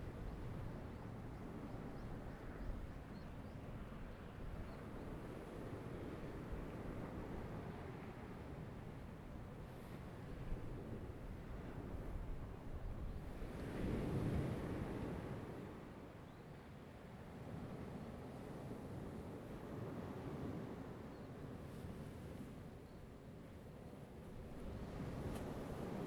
Koto island, Taitung County - sound of the waves
In the beach, Sound of the waves
Zoom H2n MS +XY